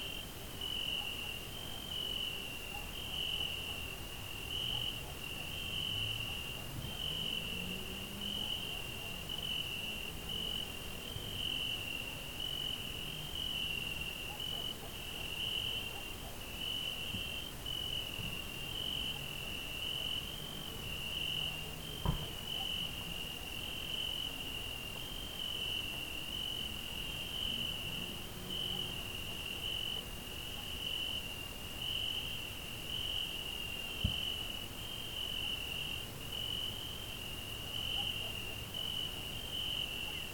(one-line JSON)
{"title": "Unnamed Road, Aminteo, Greece - Night sounds in the field", "date": "2021-08-28 00:47:00", "description": "Record by: Alexandros Hadjitimotheou", "latitude": "40.66", "longitude": "21.73", "altitude": "538", "timezone": "Europe/Athens"}